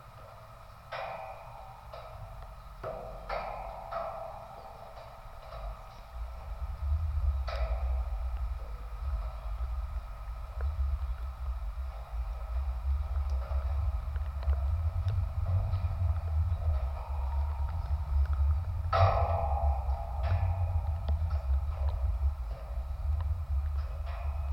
Utena, Lithuania, metallic football gates
light rain. contact microphones placed on metallic football gates on kids playground